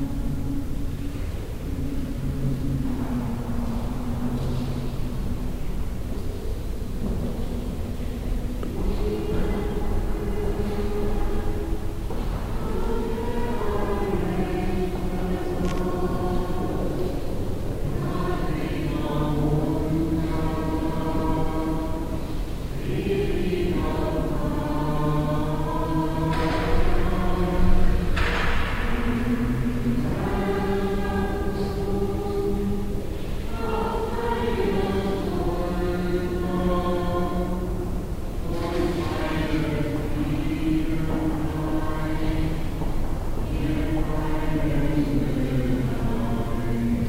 velbert neviges, mariendom. gläubigengesänge - velbert neviges, mariendom. glaeubigengesaenge
gesaenge glaeubiger in der marienkirche, mittags, frühjahr 07
project: social ambiences/ listen to the people - in & outdoor nearfield recordings